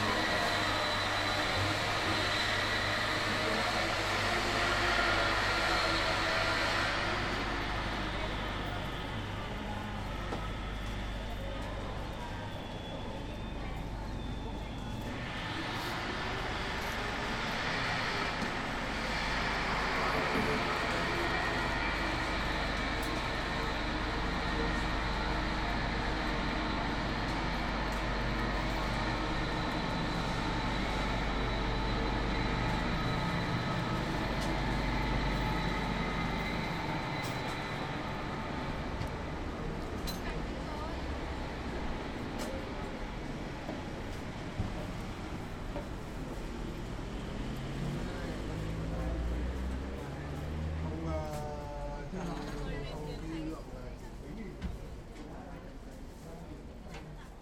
Little Hanoi, Libus
Recording from the Vietnamese Market Halls SAPA in Libuš. The Little Hanoi is hidden in the outskirts, inside the industrial complex of the former nightmarish Prague Meat factory. They call the Market SAPA, inspired paradoxically by a beautiful town somewhere in the Vietnamese mountain range near the Chinese border.